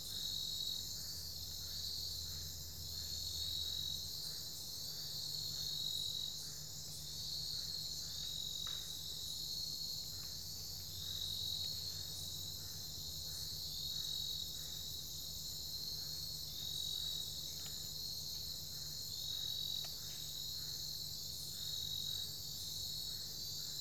Cape Tribulation, QLD, Australia - night in the mangroves of cape tribulation
i was shown to this location by my hosts at cape trib farmstay, sam, jordan and andrew, on my last night in cape tribulation as this area was privately owned by them. while i wanted to record further away from cape trib they strongly suggested this area and since i could feel they didn't feel like driving further away i thought i would give the place a go. the recording ended up being a bit of a dissapointment for me as you could still hear the road very clearly as well as the drones of the generators from the town. fortunately when jordan and i went to pick up the microphones a few hours later he felt like driving to the marrdja mangroves about 20 minutes drive away where i originally wanted to record and i ended up getting a fantastic recording there at one in the morning! i still do like this recording though.
recorded with an AT BP4025 into an Olympus LS-100.
Cape Tribulation QLD, Australia, 4 January 2014